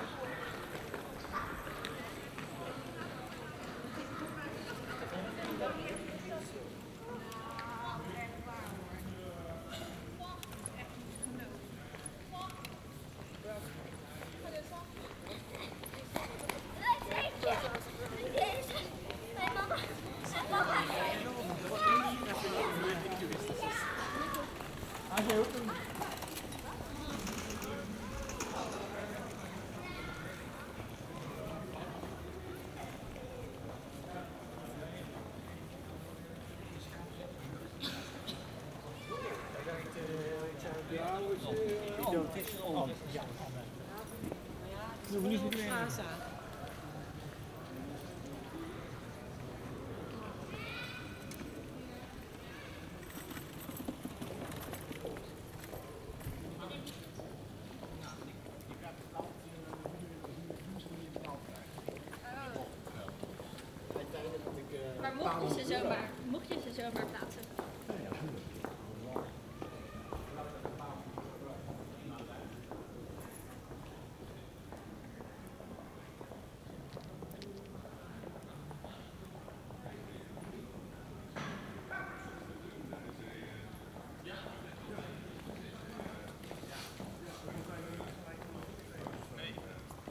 Het Binnenhof, Den Haag, Nederland - Voetgangers on the Binnenhof
Pedestrians on the Binnenhof. Movers working in the background.
Binaural recording.
Den Haag, Netherlands, 12 March 2016